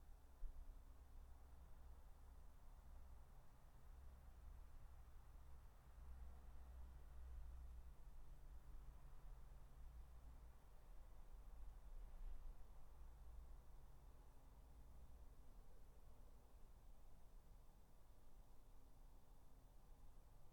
Dorridge, West Midlands, UK - Garden 19
3 minute recording of my back garden recorded on a Yamaha Pocketrak